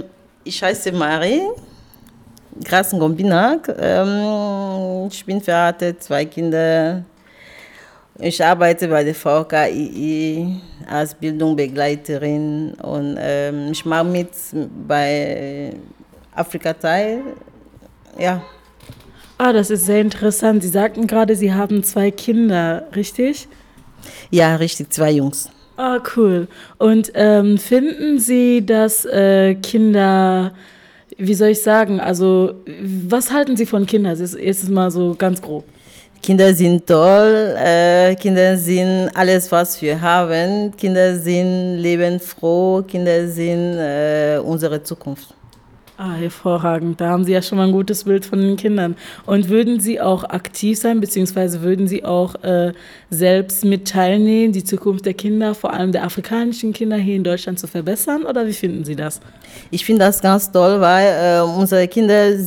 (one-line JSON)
{"title": "Office of AfricanTide Union, Dortmund - zwischen den Kulturen...", "date": "2018-02-10 11:30:00", "description": "... Raisa interviews Marie… they get talking about the education of children. How to turn the difficulty of life between two cultures in to an advantage…?!", "latitude": "51.52", "longitude": "7.47", "altitude": "83", "timezone": "Europe/Berlin"}